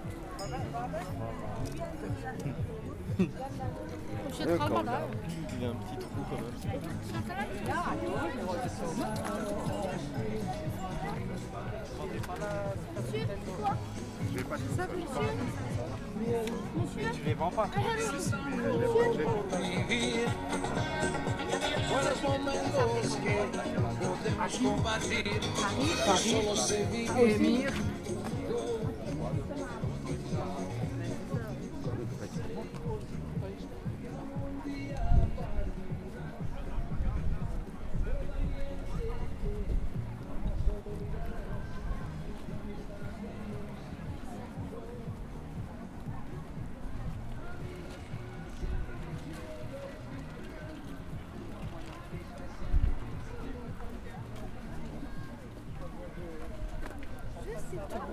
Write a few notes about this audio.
Marché au puces Stade Strasbourg-Neudorf, déambulation, enregistrement Zoom H4N